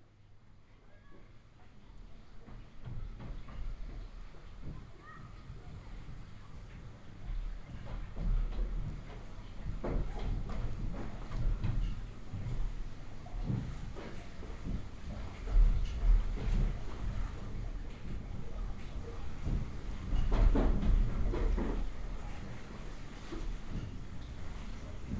het remmen (vangen), het aankoppelen van het rad, het vervang er af (de remmen los) en het malen
the windmill is connected for turning the water
Leiden, The Netherlands, 9 July 2011